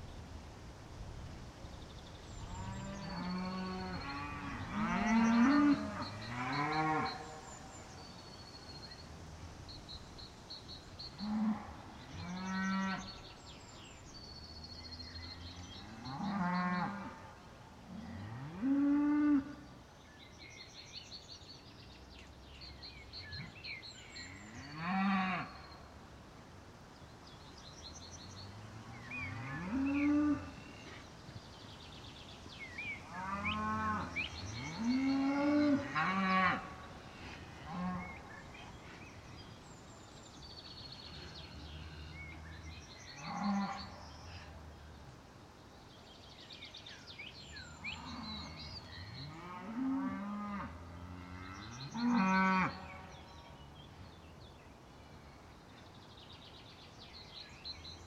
{"title": "Piirimäe, Farm, Estonia - herd of cows in the early morning", "date": "2022-05-16 05:26:00", "description": "With the green grass coming up quickly the nearby farm operation released their cows this week, introducing a new dynamic to the local soundscape.", "latitude": "58.17", "longitude": "27.21", "altitude": "47", "timezone": "Europe/Tallinn"}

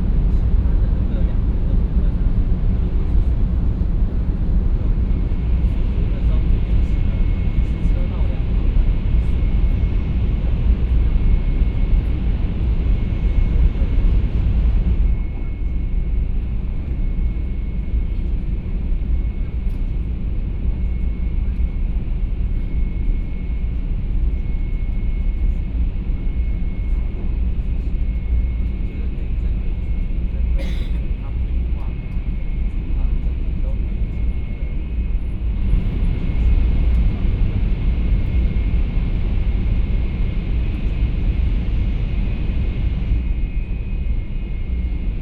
{"title": "Zhongli Dist., Taoyuan City, Taiwan - High - speed railway", "date": "2016-11-22 07:51:00", "description": "High - speed railway, In the compartment", "latitude": "24.99", "longitude": "121.20", "altitude": "87", "timezone": "Asia/Taipei"}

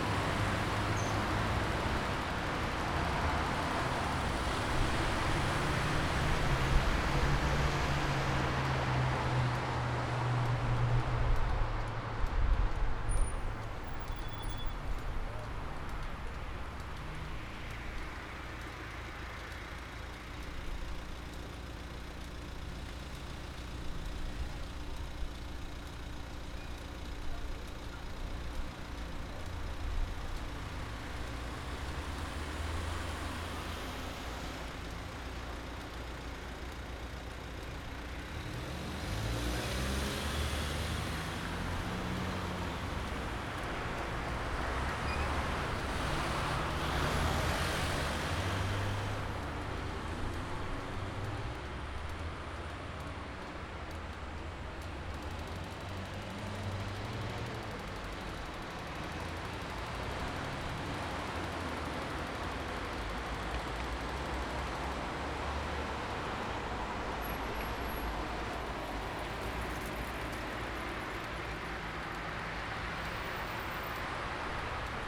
{"title": "Tongelresestraat, Eindhoven", "description": "Queens Night 2010-04-30 00:22, Traffic Lights, traffic", "latitude": "51.44", "longitude": "5.50", "altitude": "19", "timezone": "Europe/Berlin"}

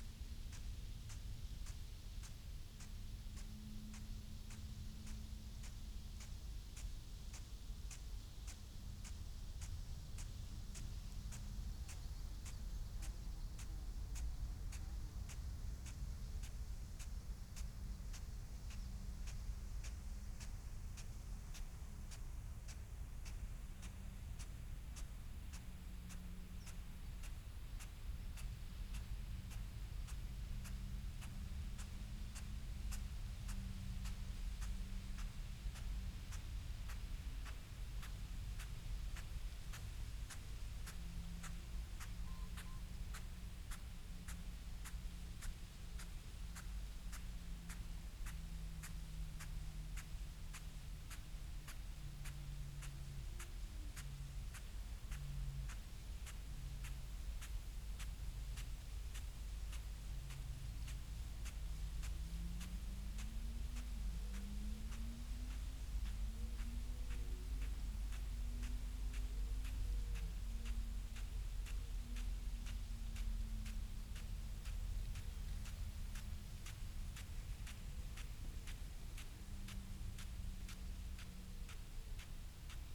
{"title": "Malton, UK - crop irrigation ... potatoes ...", "date": "2022-07-06 06:30:00", "description": "crop irrigation ... potatoes ... dpa 4060s clipped to bag to zoom h5 ... unattended time edited extended recording ... bird calls ... from ... yellow wagtail ... wood pigeon ... pheasant ... wren ...", "latitude": "54.13", "longitude": "-0.56", "altitude": "103", "timezone": "Europe/London"}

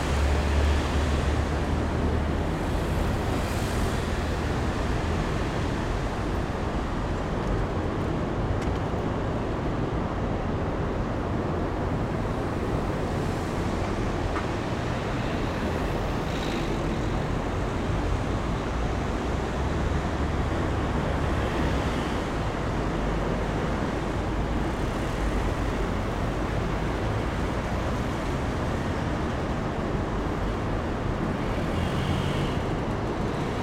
{"title": "Perugia, Italia - the end of Kennedy tunnel", "date": "2014-05-21 18:47:00", "description": "traffic in front of the kennedy tunnel\n[XY: smk-h8k -> fr2le]", "latitude": "43.11", "longitude": "12.39", "altitude": "438", "timezone": "Europe/Rome"}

